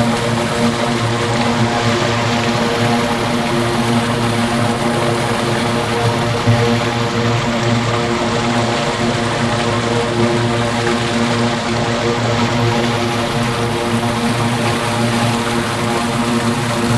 vír u stavidla odkaliště v bývalých Počeradech, dnes ČEZ elektrárna.nahráno na Zoom H2N u odvětrávací roury.
Výškov, Czech Republic, 15 October 2016, 15:12